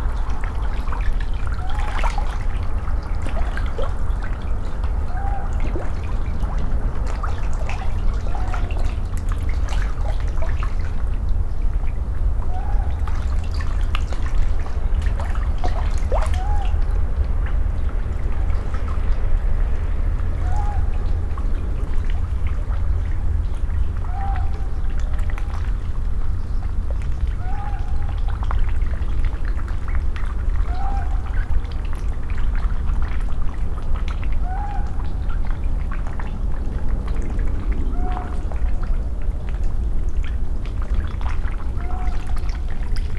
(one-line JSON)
{"title": "Muntjac Calls From The Hills, Malvern, Worcestershire, UK - Muntjac", "date": "2021-06-02 22:31:00", "description": "A Muntjac calls from the slopes of The Malvern Hills late at night. Recorded as an overnight event from my garden. The deer was about 500 metres away above the house. Rarely seen in the area but one visited us 2 years ago and was caught on our trail cam.\nMixPre 6 II. 2 x Sennheiser MKH 8020s and 2 x Beyer Lavaliers. This was an experiment with 4 tracks but only the Sennheiser tracks were used here.", "latitude": "52.08", "longitude": "-2.34", "altitude": "198", "timezone": "Europe/London"}